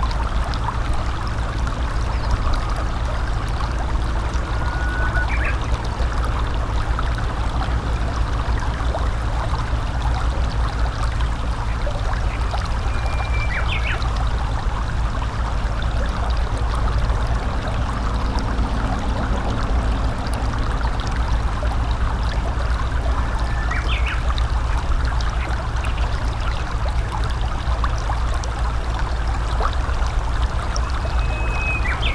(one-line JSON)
{"title": "Shinjocho, Moriyama, Shiga Prefecture, Japan - Yasugawa Small Rapids", "date": "2014-07-18 10:35:00", "description": "Yasugawa (river) small rapids, crow, Japanese bush warbler, aircraft, and traffic on a nearby bridge, Shinjo Ohashi.", "latitude": "35.10", "longitude": "135.99", "altitude": "84", "timezone": "Asia/Tokyo"}